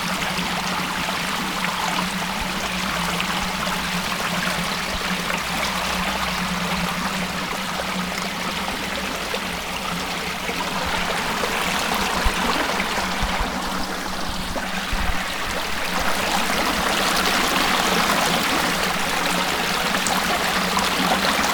pond, Studenice, Slovenia - water flow